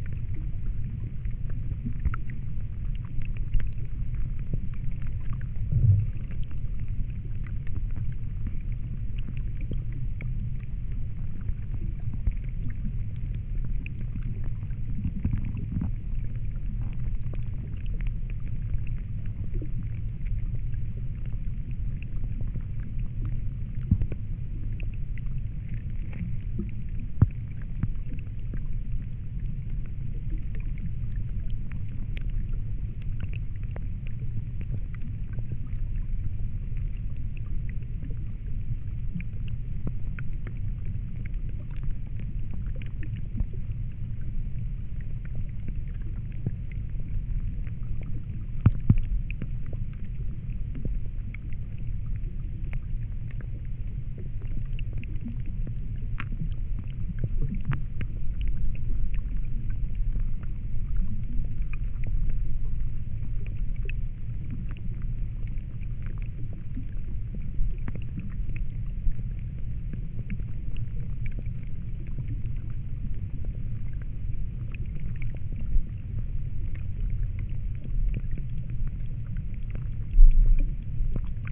first ice on a flooded meadow. listening through contact microphones